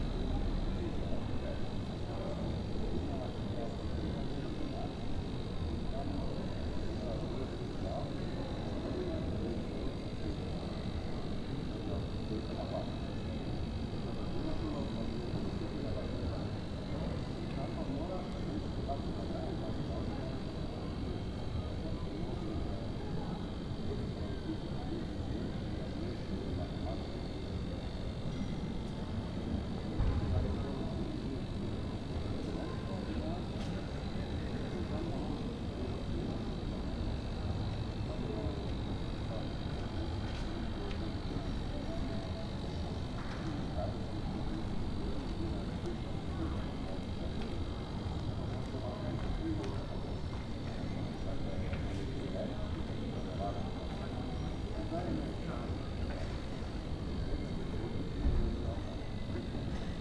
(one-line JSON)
{"title": "Grajska ulica, Maribor, Slovenia - corners for one minute", "date": "2012-08-23 22:55:00", "description": "one minute for this corner: Grajska ulica 1", "latitude": "46.56", "longitude": "15.65", "altitude": "277", "timezone": "Europe/Ljubljana"}